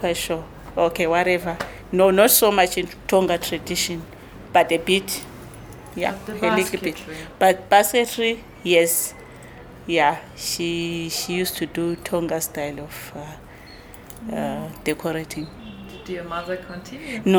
…we resume our recording with Nonhlanhla at the far end of the back yard, just in front of the care-taker’s house. Nonnie talks about her grandmother who ”was a bit of an artist…”, weaving mats and baskets and introducing the young girl to the traditional patterns in Ndebele and Tonga culture…
Find Nonhlanhla’s entire interview here: